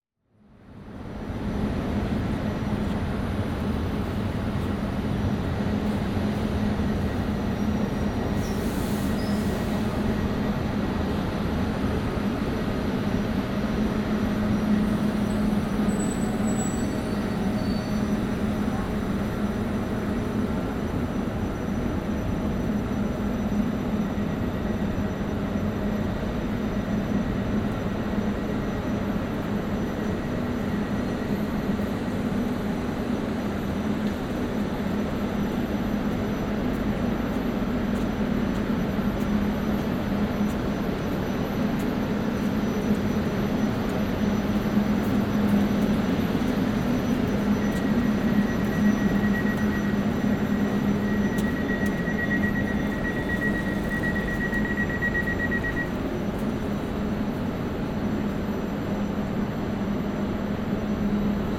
Warsaw Central, Warszawa, Poland - (99 BI) Railway platforms
Binaural recording of railway station platforms.
Recorded with Soundman OKM + Zoom H2n